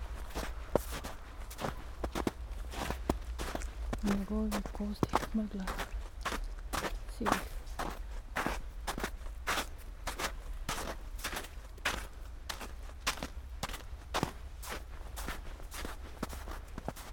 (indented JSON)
{
  "title": "sonopoetic path, Maribor, Slovenia - walking poem",
  "date": "2013-01-22 17:29:00",
  "description": "snow, steps, spoken words, almost dark",
  "latitude": "46.57",
  "longitude": "15.65",
  "altitude": "289",
  "timezone": "Europe/Ljubljana"
}